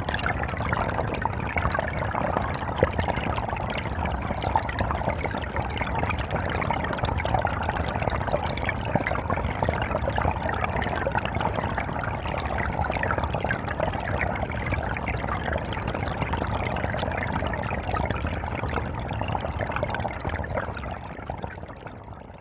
Stereo hydrophone recording of a small stream below Holme Moss summit.
Dewsbury, Kirklees, UK, 18 April